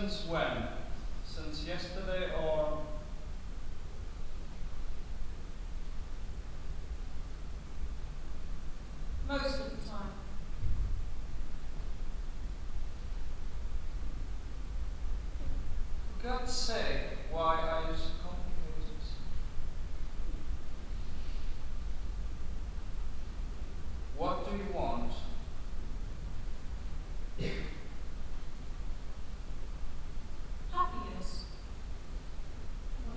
Düsseldorf, tanzhaus nrw, main stage, performance - düsseldorf, tanzhaus nrw, aufführung im grossen saal
tanzhaus nrw, at the main stage - sound of a dance performance
soundmap nrw: social ambiences/ listen to the people - in & outdoor nearfield recordings
24 January 2009